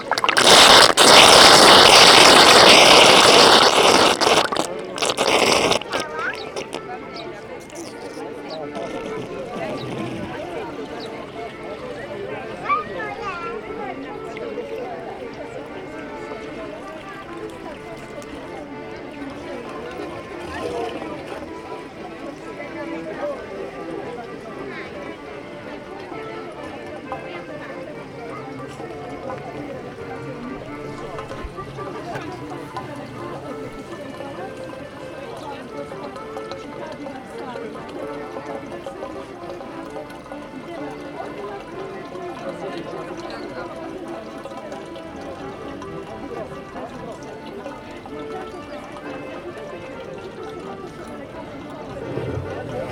Stare Miasto, Warszawa, Pologne - Fontanna warszawskiej Syrenki
Fontanna warszawskiej Syrenki w Rynek Starego Miasta